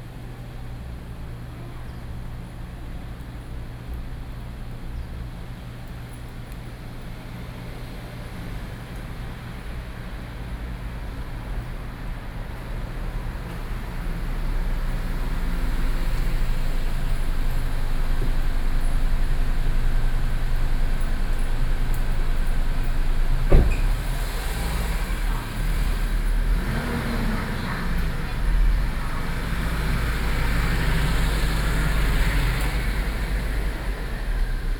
Ln., Qingtian St., Da’an Dist. - Small alley
Dogs barking, Bird calls, Traffic Sound, small Alley
Binaural recordings, Sony PCM D50